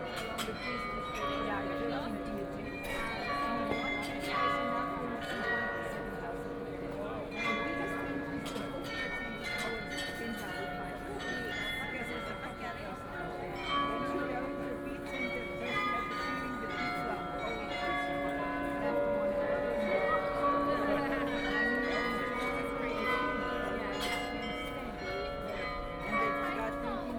In the Square, Church bells, A lot of tourists